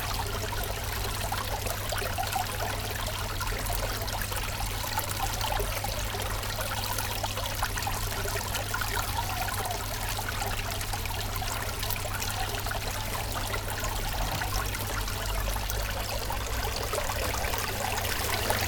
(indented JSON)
{
  "title": "cologne, altstadt, an groß st. martin, brunnen",
  "date": "2008-09-24 09:06:00",
  "description": "kleiner, alter brunnen im kirchhof, ruhiger moment im touristischen treiben derkölner altstadt\nsoundmap nrw: social ambiences, art places and topographic field recordings",
  "latitude": "50.94",
  "longitude": "6.96",
  "altitude": "56",
  "timezone": "Europe/Berlin"
}